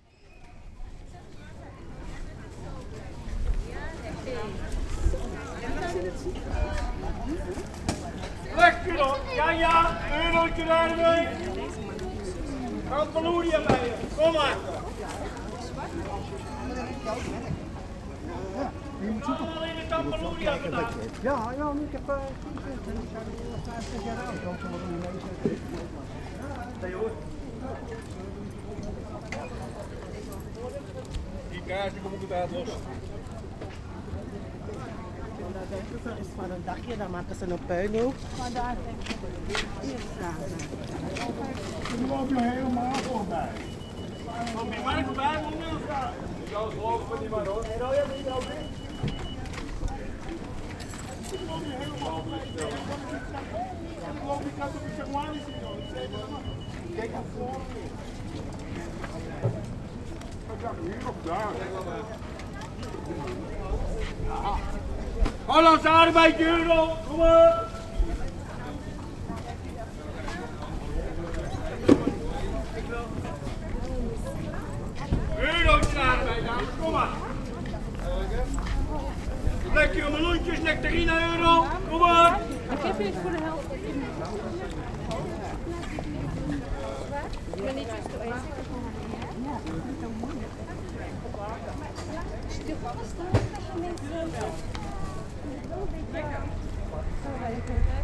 The ambience from the Dappermarkt - supposedly one of the most intercultural markets of Amsterdam. City's residents of Surinamese, Antillian, Turkish, and Moroccan origin learned how to mimick the real Dutch business calls of the fruit and fish sellers: Ja, ja, kom op, echte holandse ardbeien.. lekker hoor..